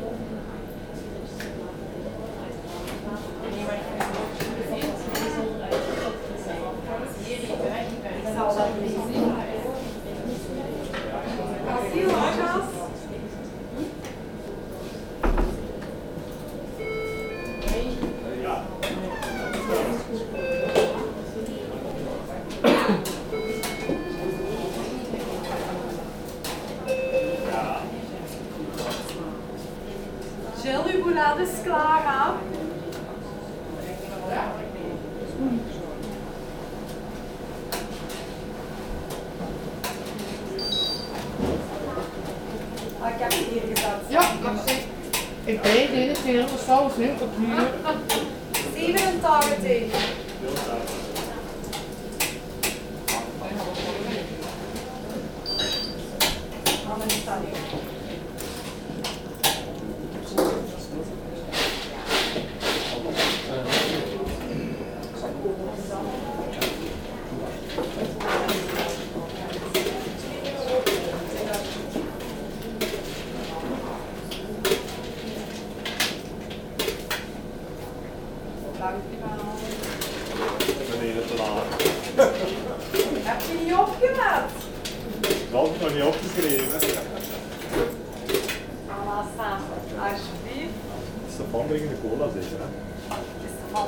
Riemst, Belgium - t Smullertje chip shop
Into the 't Smullertje chip chop, waiting for our meal. "Fritkot" or "frituur" are very popular in Belgium, it's places where you can eat some Belgian fries, and it's so delicious ! In this place, people are speaking dutch.